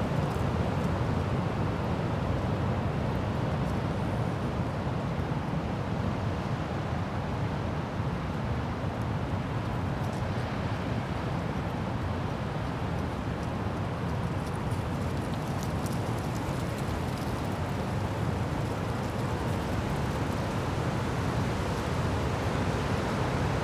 {"title": "Piechowice, Poland - (888) Strong wind", "date": "2022-02-16 14:00:00", "description": "Recording of a strong wind in the middle of the forest.\nRecorded with DPA 4560 on Sound Devices MixPre-6 II.", "latitude": "50.83", "longitude": "15.57", "altitude": "606", "timezone": "Europe/Warsaw"}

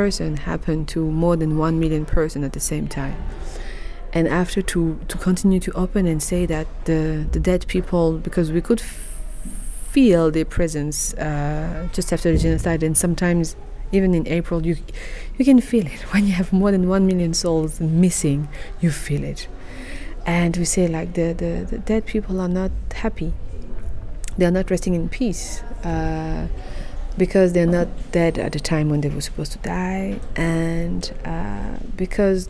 {"title": "City Library, Hamm, Germany - The heritage of genocide…", "date": "2014-06-16 15:44:00", "description": "… Carole continues telling us how artists, and especially women artists picked up the task of facing the heritage of genocide und of healing social trauma…", "latitude": "51.68", "longitude": "7.81", "altitude": "66", "timezone": "Europe/Berlin"}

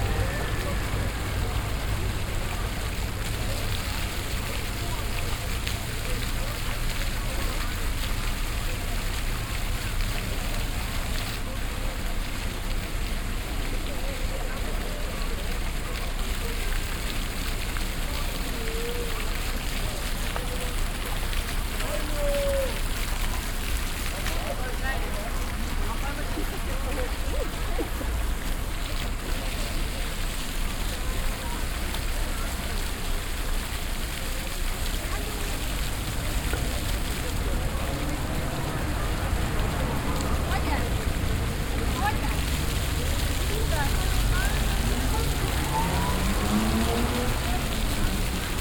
{"title": "essen, willy brandt platz, fountain", "date": "2011-06-09 12:08:00", "description": "A floor fountain nearby a main street. Water sound nearly overwhwelmed by the passing traffic and passengers walking by. Nearby a group of alcohol drinking people. // the fountain seems to be new, as the topographic picture still shows a taxi stand here//\nProjekt - Stadtklang//: Hörorte - topographic field recordings and social ambiences", "latitude": "51.45", "longitude": "7.01", "altitude": "93", "timezone": "Europe/Berlin"}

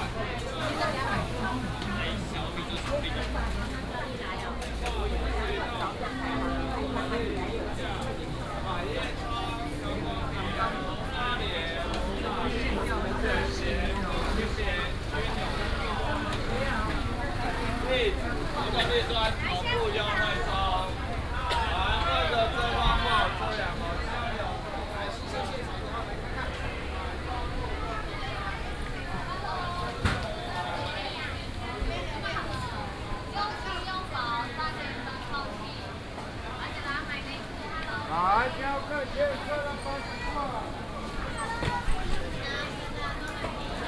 {"title": "Línyí St, Zhongzheng District - Traditional Markets", "date": "2017-08-25 10:19:00", "description": "Walking through the Traditional Taiwanese Markets, Traffic sound, vendors peddling, Binaural recordings, Sony PCM D100+ Soundman OKM II", "latitude": "25.04", "longitude": "121.53", "altitude": "16", "timezone": "Asia/Taipei"}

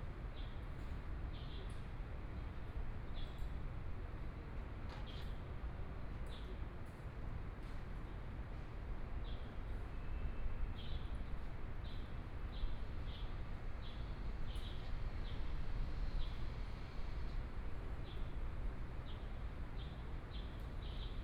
{"title": "RenDe Park, Taipei City - in the Park", "date": "2014-04-04 16:08:00", "description": "Holiday in the Park, Sitting in the park, Traffic Sound, Birds sound\nPlease turn up the volume a little. Binaural recordings, Sony PCM D100+ Soundman OKM II", "latitude": "25.05", "longitude": "121.53", "altitude": "8", "timezone": "Asia/Taipei"}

